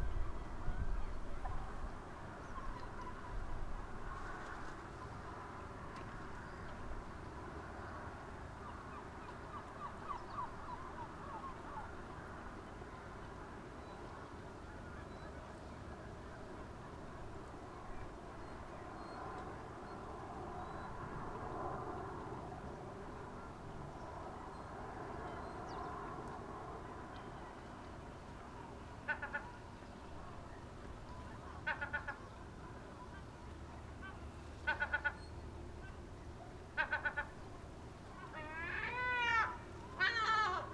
{"title": "from/behind window, Novigrad, Croatia - summer morning", "date": "2013-07-18 06:31:00", "description": "seagulls, shy waves, car traffic from afar ... morning sounds at the sea side", "latitude": "45.32", "longitude": "13.56", "timezone": "Europe/Zagreb"}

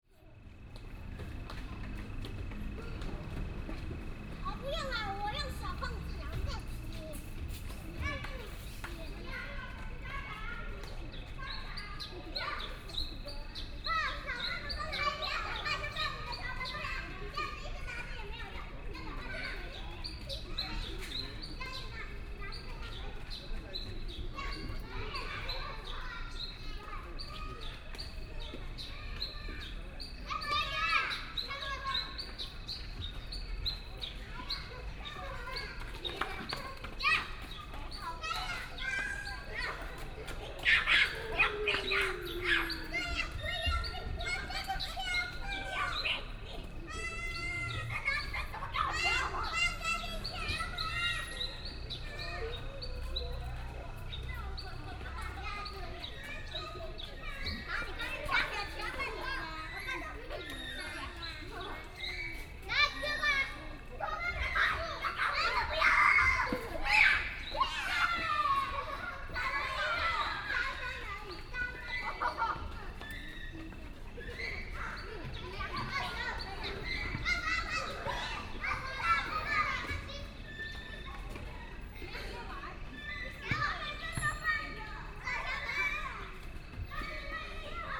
{"title": "Huashun St., Zhonghe Dist. - Children and birds sound", "date": "2017-04-30 17:26:00", "description": "in the Park, sound of the birds, traffic sound, Child", "latitude": "25.00", "longitude": "121.47", "altitude": "19", "timezone": "Asia/Taipei"}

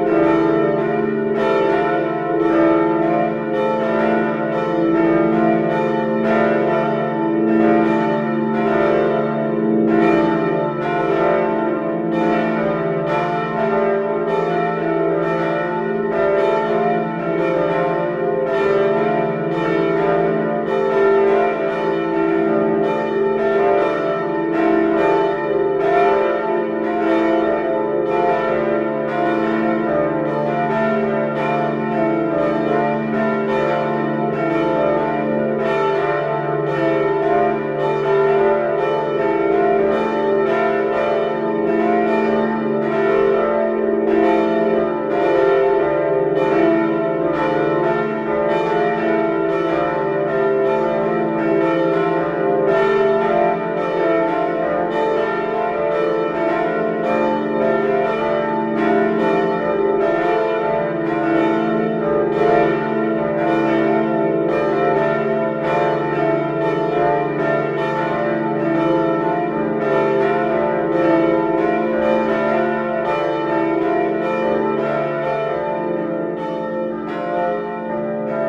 {"title": "Lessines, Belgique - Lessines bells", "date": "2014-06-14 14:25:00", "description": "Manual ringing of the three bells of the Lessines church.", "latitude": "50.71", "longitude": "3.83", "altitude": "29", "timezone": "Europe/Brussels"}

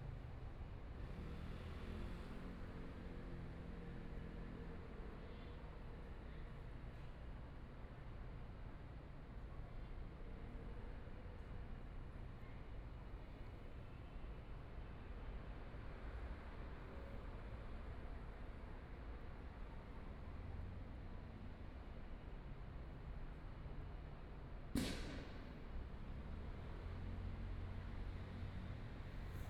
{"title": "Shuangcheng Park, Taipei City - in the Park", "date": "2014-02-10 15:38:00", "description": "in the Park, Environmental sounds, Traffic Sound, Motorcycle Sound, Pedestrian, Clammy cloudy, Binaural recordings, Zoom H4n+ Soundman OKM II", "latitude": "25.07", "longitude": "121.52", "timezone": "Asia/Taipei"}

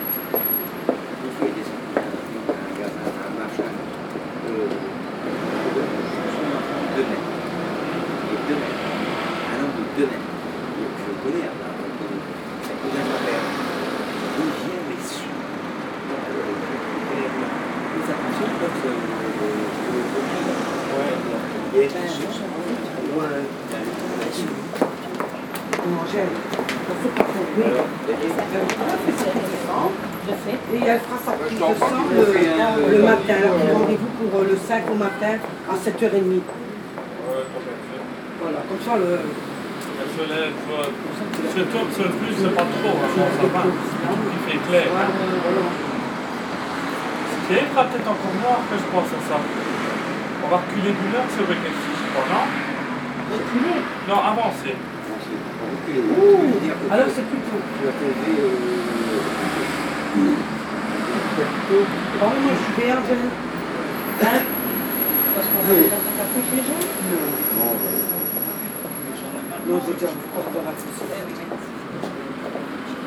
{"title": "Brussels, Bordet Hospital", "date": "2011-03-25 14:57:00", "description": "Brussels, conversation near the Bordet Hospital.\nConversation aux abords de l'hôpital Bordet.", "latitude": "50.83", "longitude": "4.35", "altitude": "48", "timezone": "Europe/Brussels"}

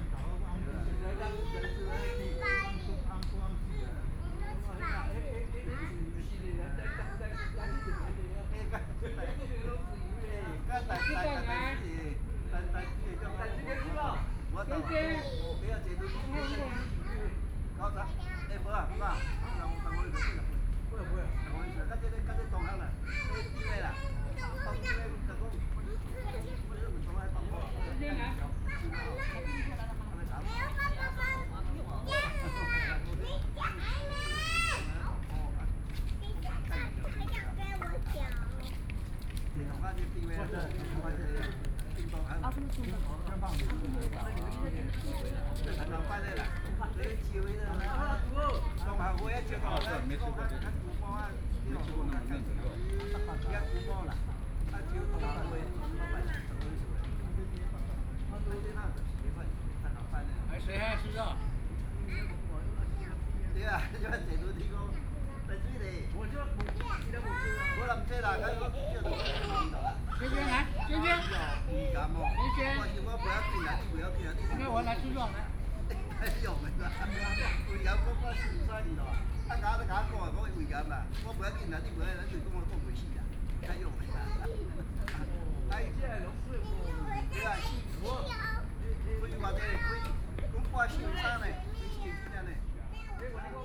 {"title": "Taipei Botanical Garden, Taipei City - in the Park", "date": "2013-09-13 17:03:00", "description": "in the Park, Children and the elderly, birds song, Sony PCM D50 + Soundman OKM II", "latitude": "25.03", "longitude": "121.51", "altitude": "13", "timezone": "Asia/Taipei"}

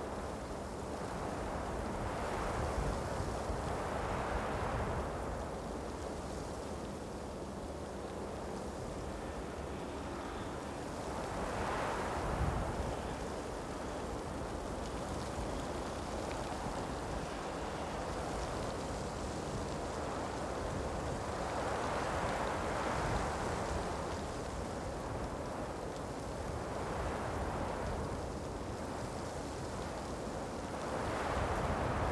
{"title": "Spremberg, Germany - Wind through a young conifer tree", "date": "2012-08-24 14:14:00", "description": "Small conifers have taken root around the edge of the mine precipice", "latitude": "51.59", "longitude": "14.29", "altitude": "83", "timezone": "Europe/Berlin"}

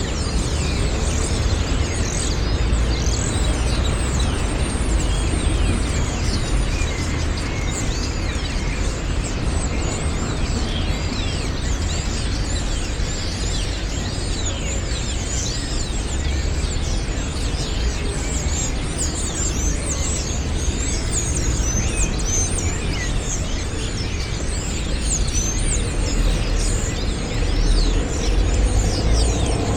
{"title": "Firenze, Italy - Starlings totally occupied Florence", "date": "2013-04-17 02:08:00", "description": "I still don't know, whether these starlings just stopped in Florence on their regular way to the north, or they were blocked there by the unusually delayed spring this year, or perhaps, it is their normal destination there.", "latitude": "43.78", "longitude": "11.25", "altitude": "52", "timezone": "Europe/Rome"}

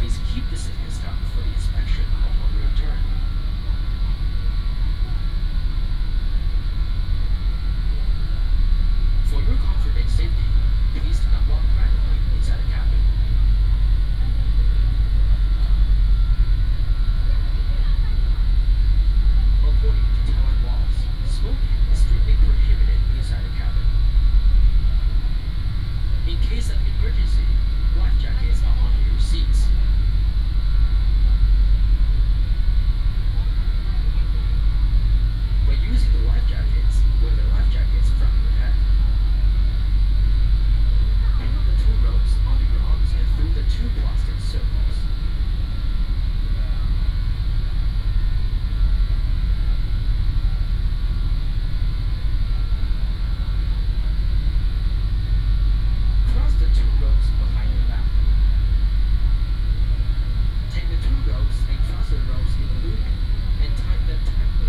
{"title": "東港漁港, Donggang Township - In the cabin", "date": "2014-11-01 12:30:00", "description": "In the cabin, Information broadcast by boat", "latitude": "22.47", "longitude": "120.44", "altitude": "3", "timezone": "Asia/Taipei"}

